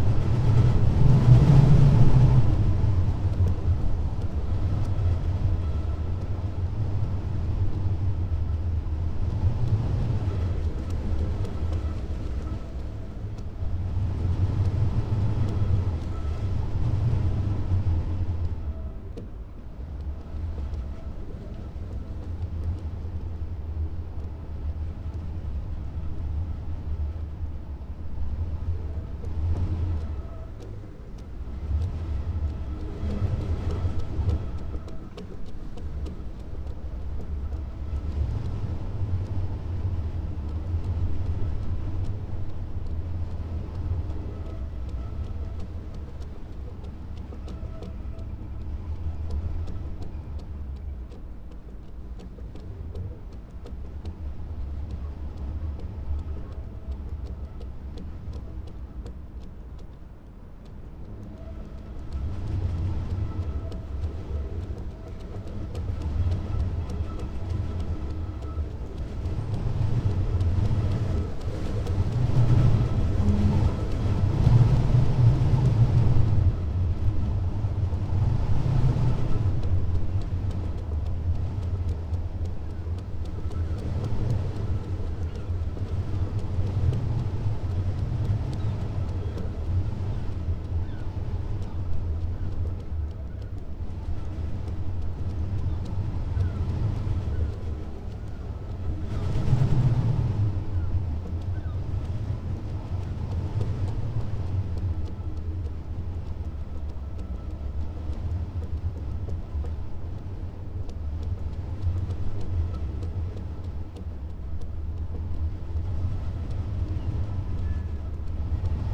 Crewe St, Seahouses, UK - flagpole and iron work in wind ...
flagpole lanyard and iron work in wind ... xlr sass to zoom h5 ... bird calls from ... herring gull ... starling ... grey heron ... jackdaw ... lesser black-backed gull ... unedited ... extended recording ...